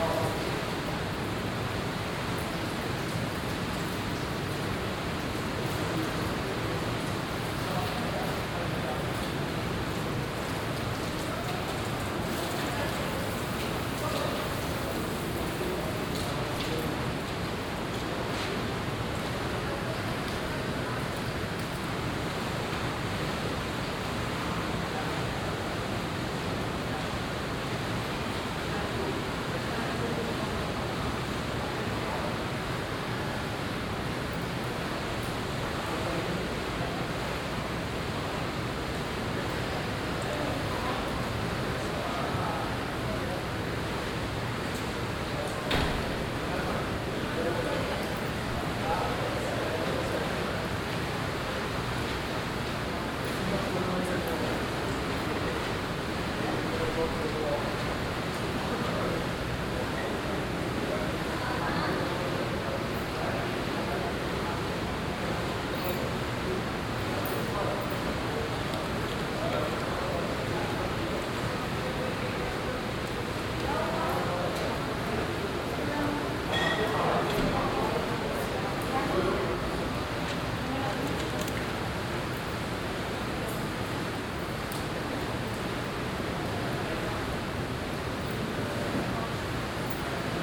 frankfurt a. main, rebstock bath, sauna
in the sauna, footsteps in wet sandals, sounds of the bar
soundmap d: social ambiences/ listen to the people - in & outdoor nearfield recordings